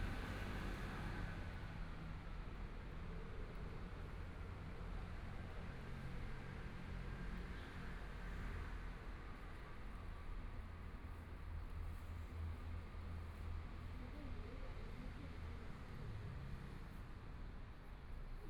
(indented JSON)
{
  "title": "JinBei Park, Taipei City - in the Park",
  "date": "2014-02-15 13:52:00",
  "description": "Sitting in the park, Cloudy day, Pigeons Sound, Traffic Sound, Binaural recordings, Zoom H4n+ Soundman OKM II",
  "latitude": "25.06",
  "longitude": "121.54",
  "timezone": "Asia/Taipei"
}